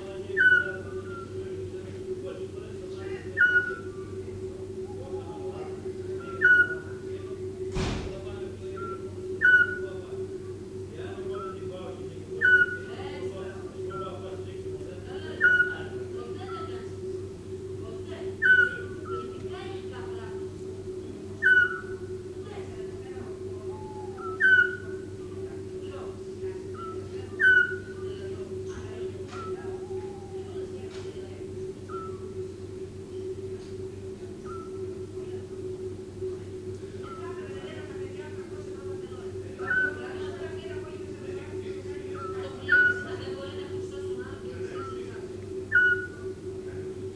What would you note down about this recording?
greece, monodendri, evening, scops owl, voices